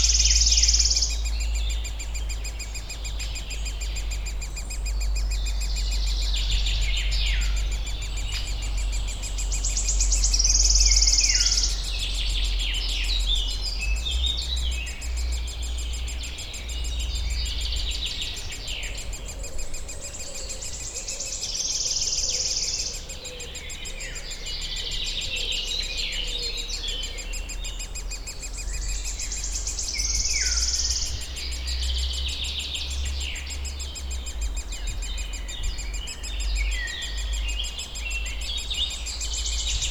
Morasko nature reserve - woodpecker chicks
late spring forest ambience in Morasko nature reserve. lots of bird activity. nearby a tree with a woodpecker nest full of woodpecker chicks. they make the continuous beeping sound which increases everytime the adult bird comes along with food for the chicks. the whole recording with undergrowth of low freq drone of local traffic.
Poznań, Poland, 24 May 2015, 12:17